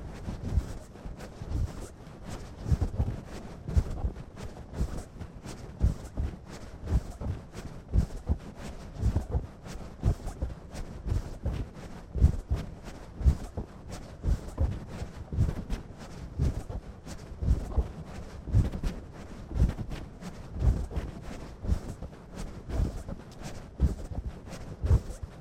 berlin: friedelstraße - walking the bags: walking bag #0012 by walking hensch
walking the bags
Berlin, Germany, 12 June